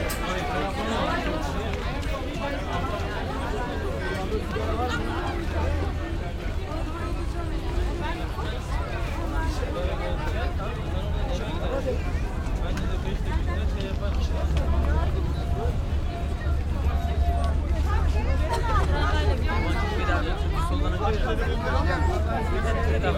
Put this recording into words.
recording of the walk from the market tunnel on to the lower deck of the bridge